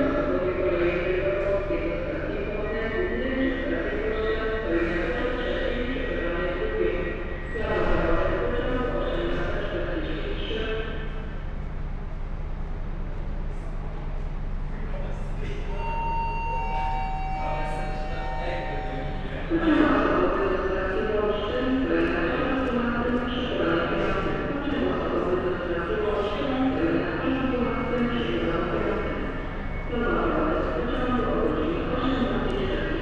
Towarowa, Leszno, Polska - Passage under Train Station Platform
A passage under Train Station Platform. Recorded with Sound Devices MixPre 6-II and Lom Usi Pro.
województwo wielkopolskie, Polska, 9 September 2021, 18:20